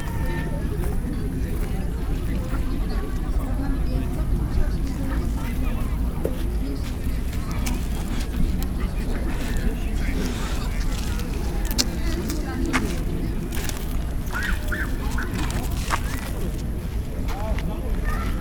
Park beach at Kiekrz lake, Poznan - midweek summer evening
evening ambience at a park beach at Kiekrz lake. A few people enjoying summer evening at the lake. recorded on a bench at a distance from the beach. (roland r-07)